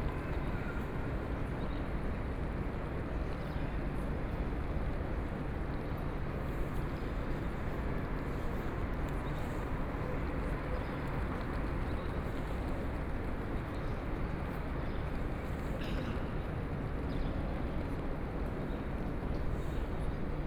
München-Flughafen, Germany - In the Square
In the Square
Munich International Airport (MUC), Oberding, Germany, 6 May 2014